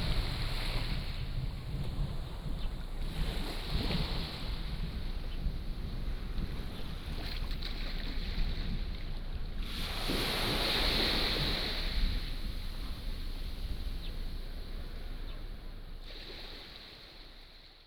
烏石鼻漁港, Taiwan - Small fishing port
Thunder and waves, Sound of the waves, Small fishing port, Tourists
Changbin Township, Taitung County, Taiwan, 8 September 2014, 15:01